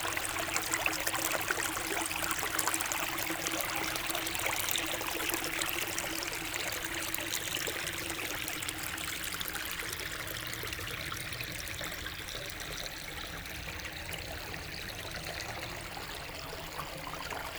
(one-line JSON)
{"title": "Nogent-le-Roi, France - Vacheresses small stream", "date": "2017-12-26 17:45:00", "description": "The very small stream called Ruisseau de Vacheresses-Les-Basses, a bucolic place in the center of a small village.", "latitude": "48.62", "longitude": "1.53", "altitude": "100", "timezone": "Europe/Paris"}